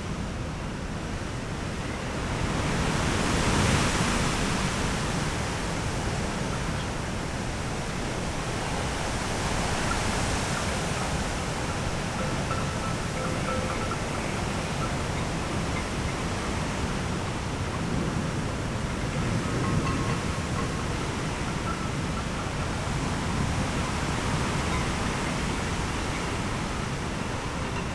stormy day (force 7-8), birch trees swaying in the wind
the city, the country & me: june 13, 2013